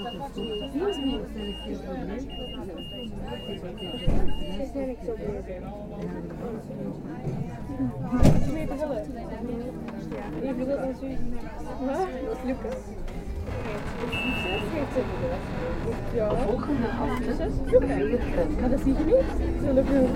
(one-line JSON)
{"title": "Gent, België - Tramway ride into the old city", "date": "2019-02-16 18:45:00", "description": "Tram ride in the heart of the old city of Ghent. The vehicle is crowded. Very difficult to record (I had to do it three times) because of a good amount of infrabass. However, the route is interesting considering that the vehicle has difficulty with tight curves. Journey from Gravensteen to Van Nassaustraat.", "latitude": "51.05", "longitude": "3.72", "altitude": "10", "timezone": "Europe/Brussels"}